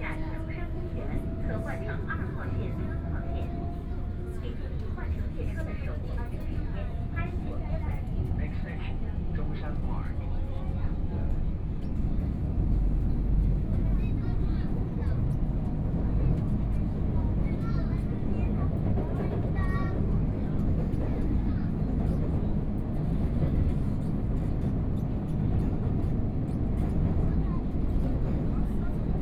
Putuo District, Shanghai - Line 4 (Shanghai Metro)
from Caoyang Road Station to Zhongshan Park Station, Broadcasting messages on the train, Binaural recording, Zoom H6+ Soundman OKM II
Shanghai, China, 23 November, ~14:00